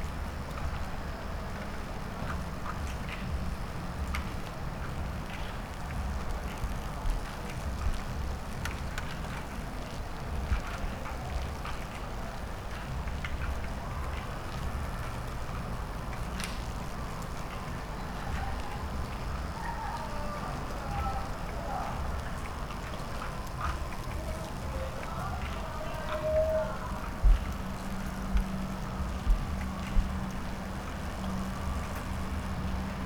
Poznan, Mateckiego Street, parking lot - sizzle of electric cables at the pylon
as soon as the air gets damp the wiring at the high voltage pylon starts to sizzle and crackle.